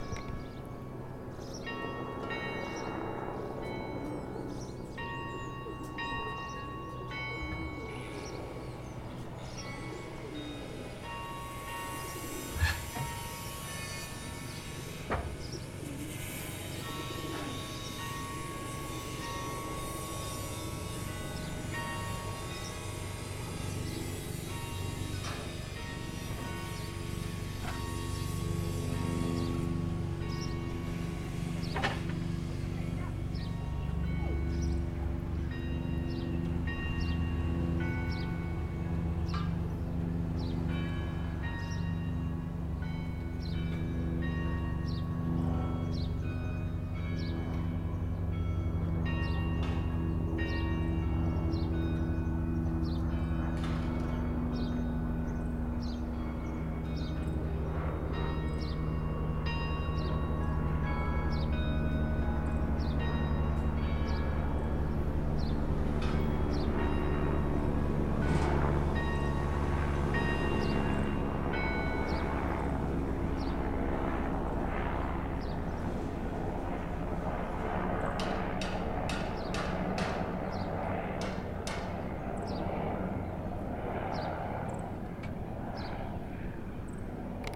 Rue des Sources, Cilaos, Réunion - 20141120 0751 carillon-de-CILAOS audio
Pour illustrer le drame qu'est le tourisme par hélicoptère à CILAOS, l'un des aspect les plus indésirable du tourisme à la Réunion.
20 November 2014, 07:51